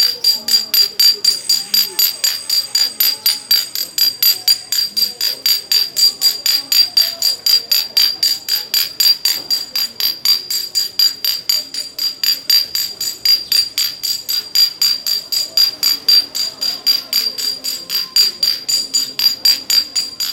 Ave Du Progres, Kinshasa, RDC - Nail Varnish Street Seller in Kinshasa
Espoir is the name of this nail varnish street seller in Kinshasa.
He is knocking 2 small glass bottle varnish to announce he is passing by.
Recorded by a MS setup Schoeps CCM41+CCM8 on a 633 Sound Devices Recorder
May 2018, Kinshasa, RDC
GPS: -4.319810 / 15.325272